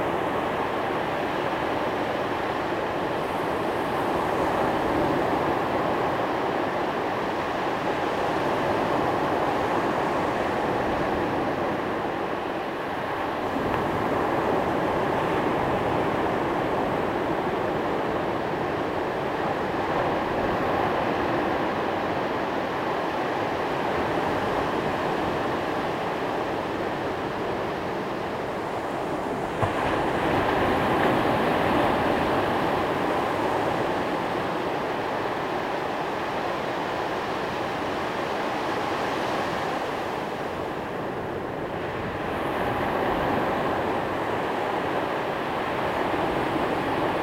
Centro Comercial Oasis, P.º del Faro, Maspalomas, Las Palmas, Spain - Waves on the beach
Just the very soft white-noise sound of waves on the beach on our holiday last December. Found myself wondering where this recording was, and remembering the peace of just sitting by the sea and listening to its sighs. It was an amazing sunset at 5pm and we waited and watched while the last of the light sank away behind the waves. It was a holiday, so no fancy heavy equipment - just my trusty EDIROL R-09, still going strong.